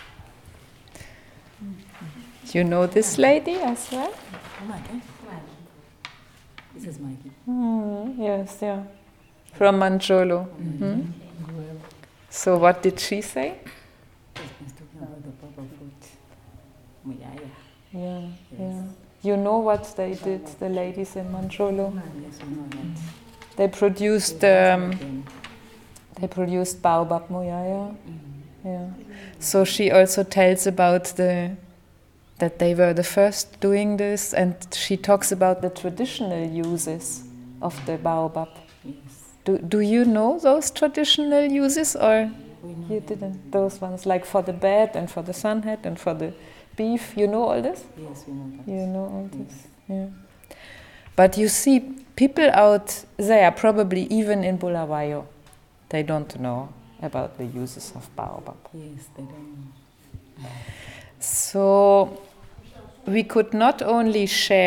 {"title": "Sikalenge Social Centre, Binga, Zimbabwe - who will be listening to us...?", "date": "2016-06-14 11:00:00", "description": "…i’m introducing the documentation project to the women of Sikalenge Women’s Forum… in each of our meetings with one Zubo’s six Women’s Forums, we were taking time for this introduction so that our project would slowly take root in the communities at large…\nZubo Trust is a women’s organization bringing women together for self-empowerment.", "latitude": "-17.69", "longitude": "27.46", "altitude": "593", "timezone": "GMT+1"}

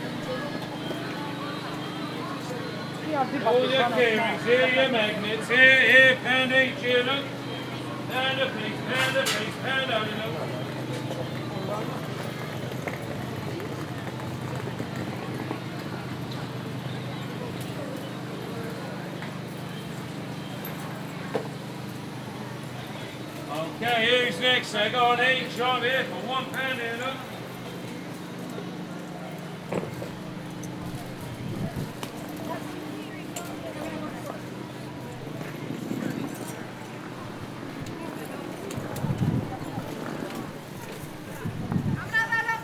{"title": "London, market Middlesex Street - a pound a piece", "date": "2010-10-03 12:00:00", "description": "London, sunday morning, walking up and down Middlesex Street market", "latitude": "51.52", "longitude": "-0.08", "altitude": "28", "timezone": "Europe/London"}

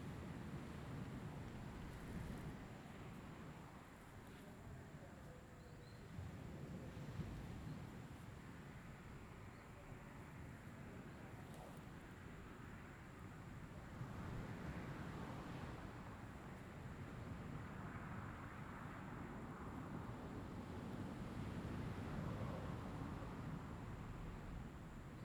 {"title": "Jiayo, Koto island - sound of the waves", "date": "2014-10-30 08:14:00", "description": "In the beach, Sound of the waves", "latitude": "22.05", "longitude": "121.52", "altitude": "10", "timezone": "Asia/Taipei"}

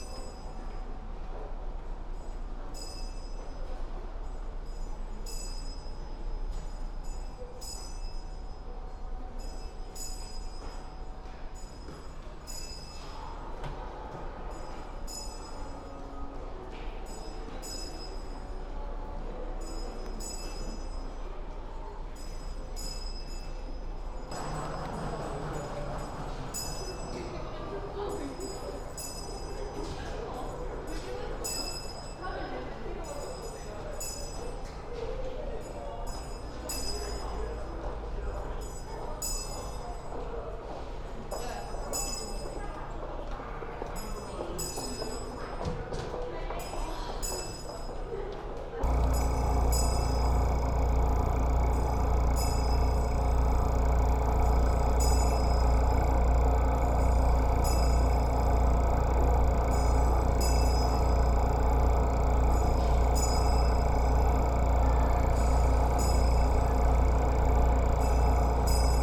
{"date": "2016-12-04 14:00:00", "description": "Hand-rung bell in Hongik Station Underground, Seoul, South Korea", "latitude": "37.56", "longitude": "126.92", "altitude": "25", "timezone": "GMT+1"}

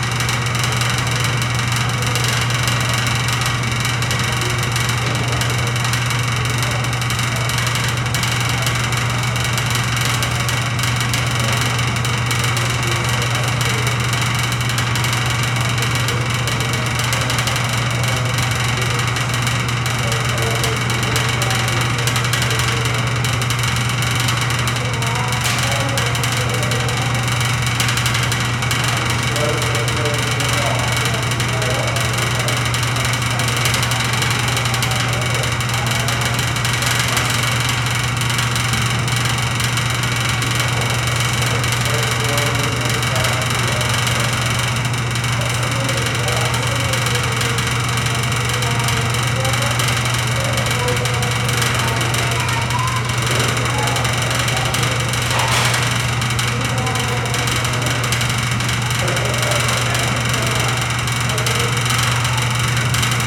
{"title": "Bench, Seattle, WA, USA - Out of Control Bench Rattle", "date": "2013-08-13 13:45:00", "description": "Crazy bench freak out, clacking and rattling in a room full of passengers aboard the Bremerton Ferry, Seattle, WA. Everyone was transfixed on the bench, almost no talking.\nSony PCM-D50", "latitude": "47.60", "longitude": "-122.35", "timezone": "America/Los_Angeles"}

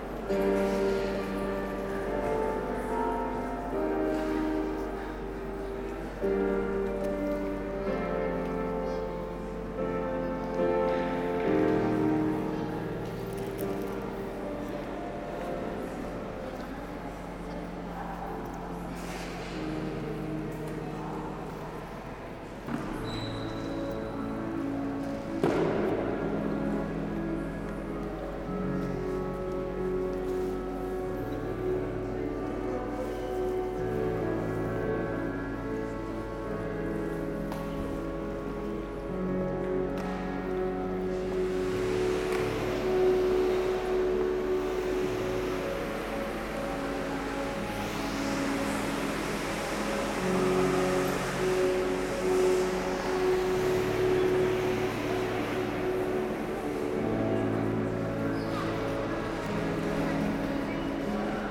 {"title": "Amiens, France - Amiens station", "date": "2017-11-05 11:23:00", "description": "While traveling, we were in the Amiens station on a Sunday morning. There’s a lot of people, discussing quietly. In first, the station hall, with a piano player. After, walking in the escalators of the two levels station and the path to the platform 7. At the end, the train passengers, the engine and finally the train to Paris leaving Amiens.", "latitude": "49.89", "longitude": "2.31", "altitude": "34", "timezone": "Europe/Paris"}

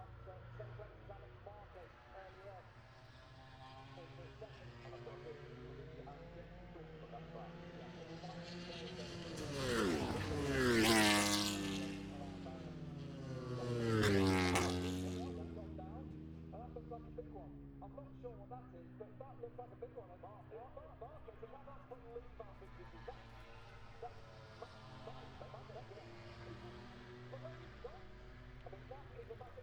{"title": "Silverstone Circuit, Towcester, UK - british motorcycle grand prix ... 2021", "date": "2021-08-27 09:55:00", "description": "moto grand prix free practice one ... maggotts ... dpa 4060s to MixPre3 ...", "latitude": "52.07", "longitude": "-1.01", "altitude": "158", "timezone": "Europe/London"}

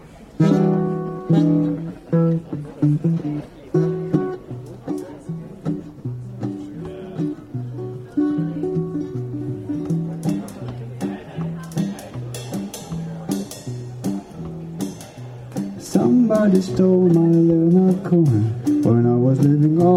{"title": "Concert at Der Kanal, Weisestr. - Der Kanal, Raumkörper, Konzert von Nicolas Pas Entier", "date": "2011-06-17 19:51:00", "description": "One of our more invisible parttakers at DER KANAL appears suddenly in passionate musical outburst. With his guitar, backed by his good friend on drums. As people during this years 48 Stunden Neukölln stand magnetified the two man band sets up their stage in the street and make our neighbours lean out from their balconies to see the face to this beautiful voice.", "latitude": "52.48", "longitude": "13.42", "altitude": "60", "timezone": "Europe/Berlin"}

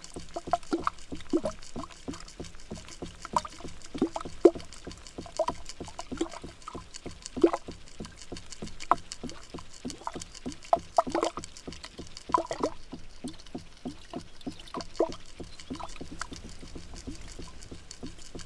{"title": "Portesham, Dorset, UK - water frame beat", "date": "2013-10-19 13:20:00", "description": "sounds collected during an autumn sound walk as part of the SDRLP project supported by HLF", "latitude": "50.68", "longitude": "-2.55", "altitude": "157", "timezone": "Europe/London"}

